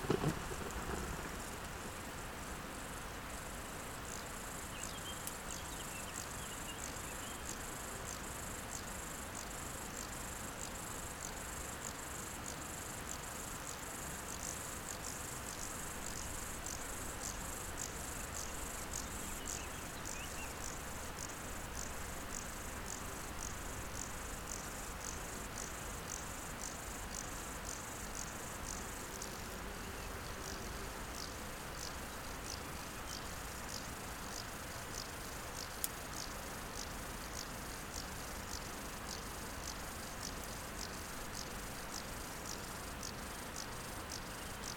Au bord de la route du Nant Fourchu dans un pré près du Chéran. criquets mélodieux quelques oiseaux .
France métropolitaine, France, 30 June, 17:30